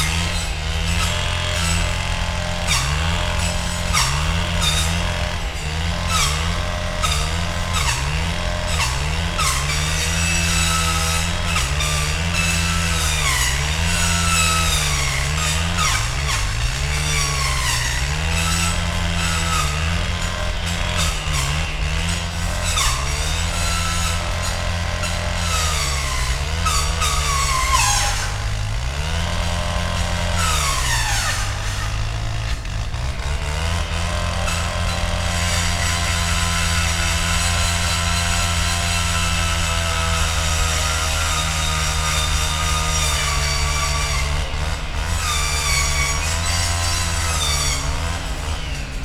Morasko Nature Reserve - workers clearing canal
two workers operating a weed-whacker, removing dense bushes from a concrete water canal. (Roland r-07)